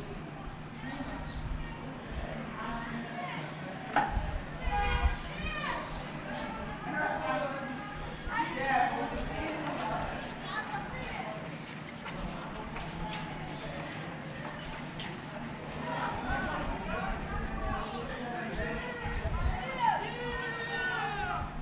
Suleiman Fasha St, Acre, Israel - Alley, women, Acre
talk, arabic, alley, womans
3 May